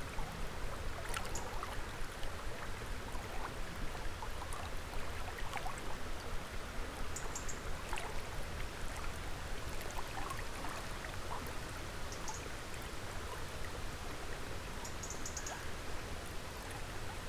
Riverside of Voytolovka. Waterflow, crows and other birds, occasional trains and planes.
Recorded with Zoom H5
Leningradskaya oblast', Russia, July 2017